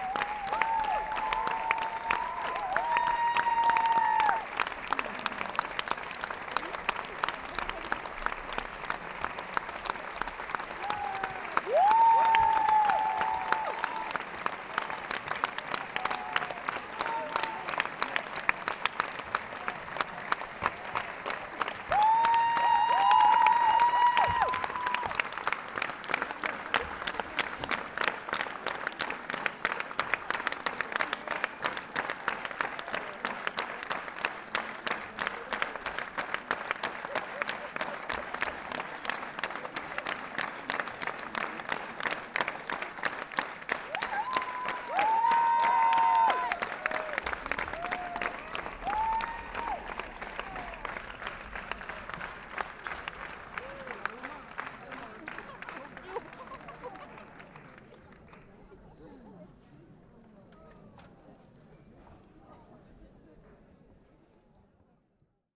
Namur, Royal Theater, standing ovation for Electre
Electre from Sophocle adapted by Wajdi Mouawad with Bertrand Cantat in the Choirs.
PCM-M10 internal microphones